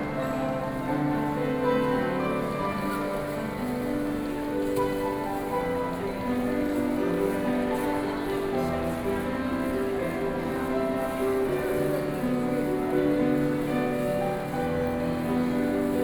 台灣台北市台大醫學院 - in the hospital
In the hospital, Piano Performance, (Sound and Taiwan -Taiwan SoundMap project/SoundMap20121129-2), Binaural recordings, Sony PCM D50 + Soundman OKM II